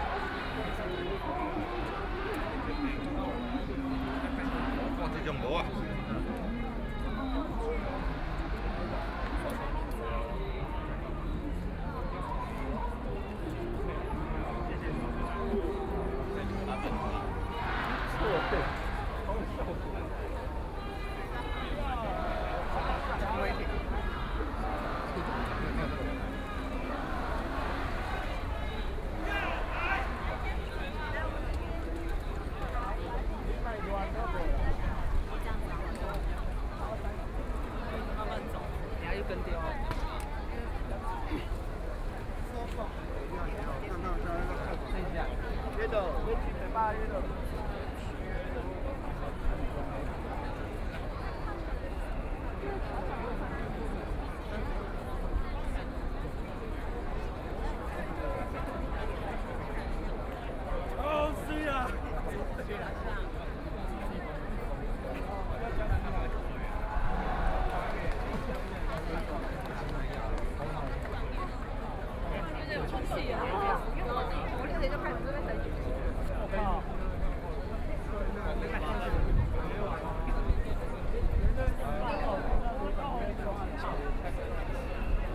Packed with people on the roads to protest government